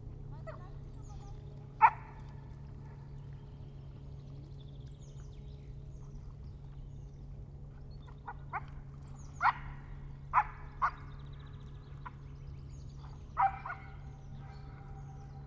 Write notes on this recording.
I've never been here before, though it's close. I've seen the landscape while departing with a plane from the nearby Berlin Schönefeld airport. It was a bright early spring morning, the view from above on these patches of forests, lakes and river-side areas was promising, deep and and touching. So I went there, and found it. And it was a sonic disappointment. Even on Sundays there's no quietness, planes lift of frequently, a carpet of noise lies over the land, the deep rumbling of engines can always be heard, long after the planes moved ahead, taking me away, on this bright day in early spring. A motor boat is passing by, a dog competes with its echo, an aircraft is heading south. (SD702, NT1A)